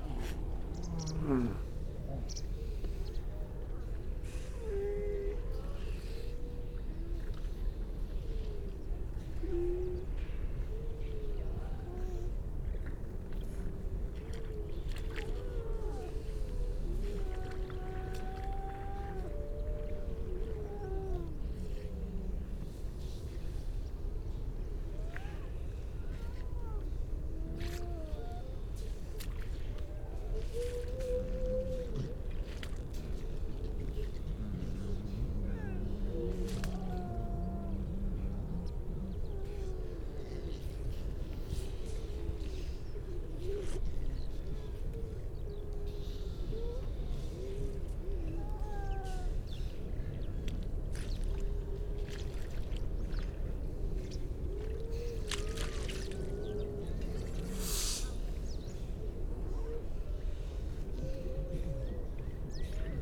Unnamed Road, Louth, UK - grey seals soundscape ...
grey seals soundscape ... generally females and pups ... parabolic ... bird calls ... pipit ... crow ... pied wagtail ... skylark ... all sorts of background noise ...
England, United Kingdom, 3 December 2019